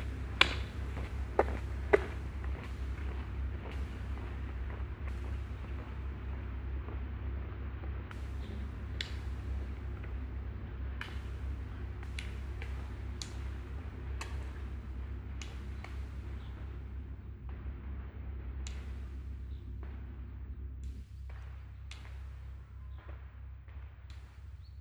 Huldange, Luxemburg - Huldange, walking sticks
Auf der Straße. Eine Frau mit Nordic Walking Stöcken geht vorbei.
On the street. A woman with nordic walking sticks passes by.
Troisvierges, Luxembourg, 4 August